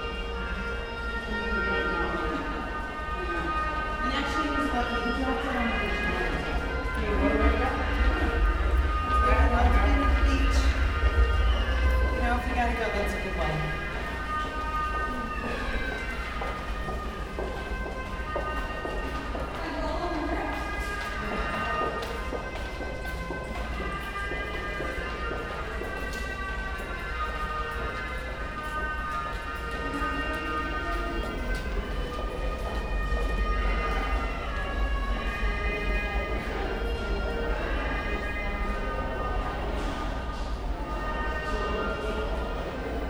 neoscenes: chinese musician in tunnel
New York, NY, USA